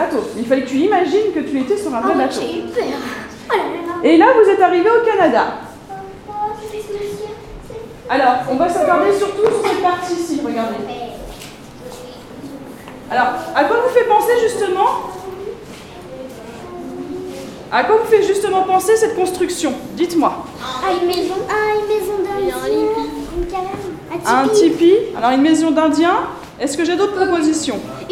Tourouvre, France - Visite des Muséales de Tourouvre
Visite des Muséales de Tourouvre avec des enfants
12 February